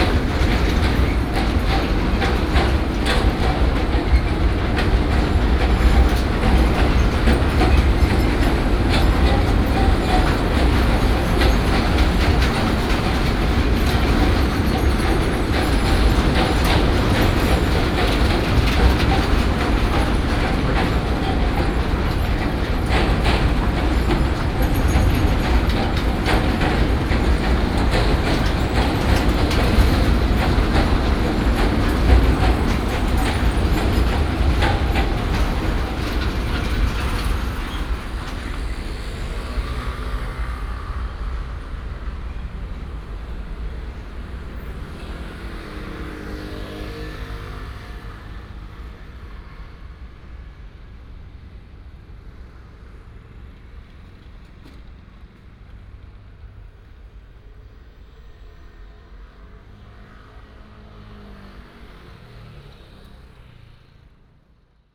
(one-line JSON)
{"title": "Fuxing Rd., Huwei Township - Transport the sugar cane train", "date": "2017-03-03 13:07:00", "description": "Transport the sugar cane train, The train passes by", "latitude": "23.71", "longitude": "120.43", "altitude": "30", "timezone": "Asia/Taipei"}